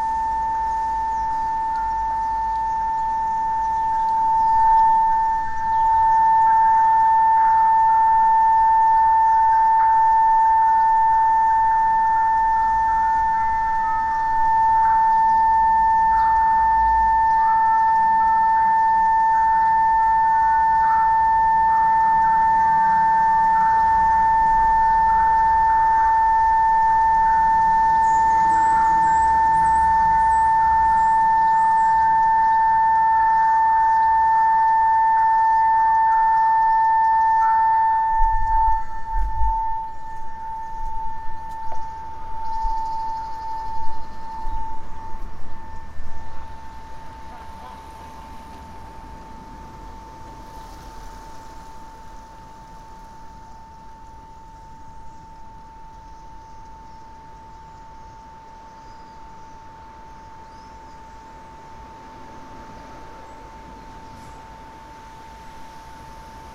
Perugia, Italy - feedback of the doorbell of don bosco hospital
feedback of a doorbel, traffic